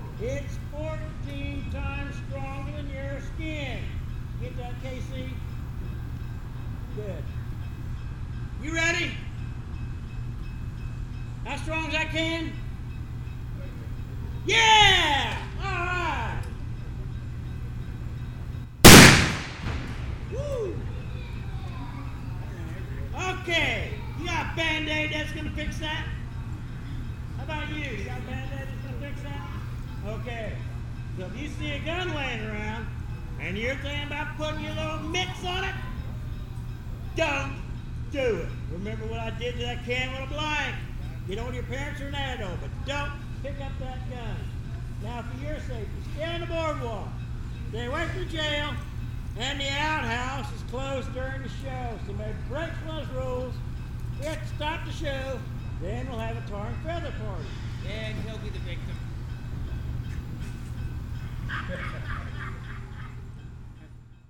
Old Abilene Town, near 215 SE 5th St, Abilene, KS, USA - Old Abilene Town Gunfight (Intro)
*Caution: Loud sound at 1:27* Actors, portraying Wild Bill Hickok and others, begin their western gunfight show with a safety speech. An aluminum can is shot to demonstrate the danger posed by a blank cartridge. The diesel engine used by the Abilene & Smoky Valley Railroad maneuvers in the background. Stereo mics (Audiotalaia-Primo ECM 172), recorded via Olympus LS-10.
27 August 2017, ~16:00